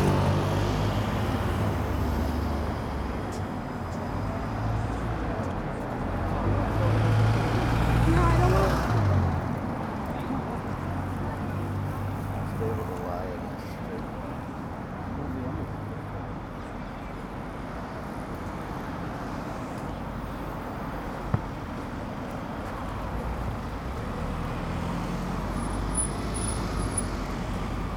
Embassy of the Russian Federation - "Stop Putin, Stop the War!" 5